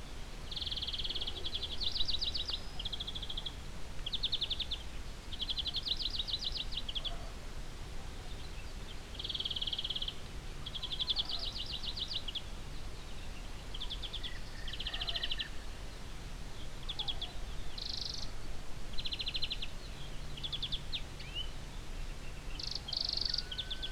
Aukštadvario seniūnija, Litauen - Lithuania, farmhouse, morning time
Sitting outside under a tree nearby the barn in the morning time. The sounds of morning birds and insects in the mellow morning wind - the incredible absence of engine noise.
international sound ambiences - topographic field recordings and social ambiences